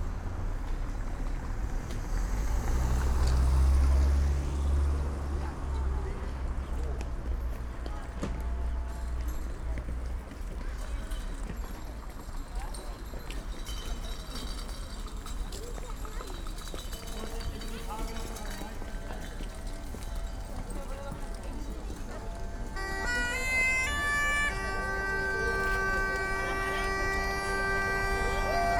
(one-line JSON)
{"title": "Maybachufer, weekly market - market walk", "date": "2012-03-02 17:10:00", "description": "walk through crowded market. pipe player the entrance. the hour before it closes, many people come here to get cheap fruits and vegetables.\n(tech: SD702 DPA4060 binaural)", "latitude": "52.49", "longitude": "13.42", "altitude": "38", "timezone": "Europe/Berlin"}